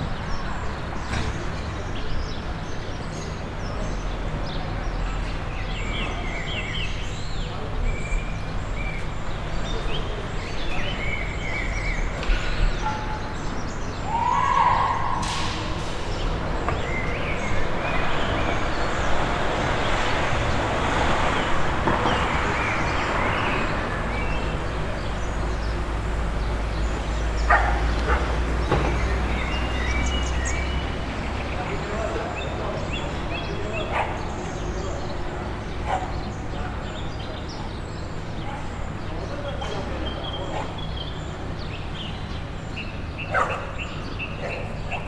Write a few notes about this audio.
atmosphere of the street 5th floor, barking dog, bells, Captation ZOOM H6